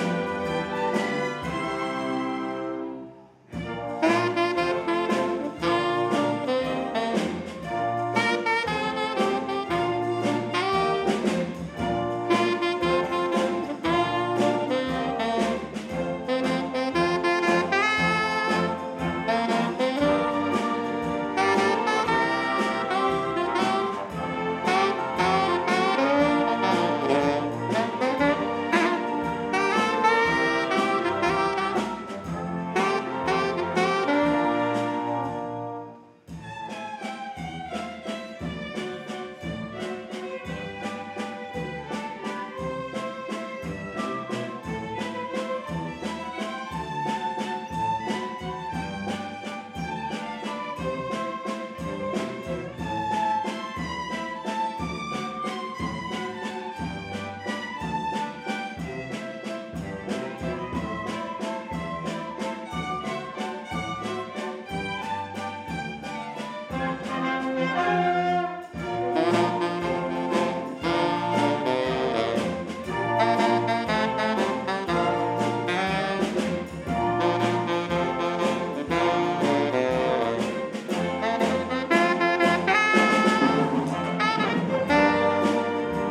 "Le Solar" - La Comète - St-Etienne
Orchestre du Conservatoire Régional de St-Etienne
Extrait du concert.
ZOOM F3 + AudioTechnica BP 4025
Auvergne-Rhône-Alpes, France métropolitaine, France, 1 October 2022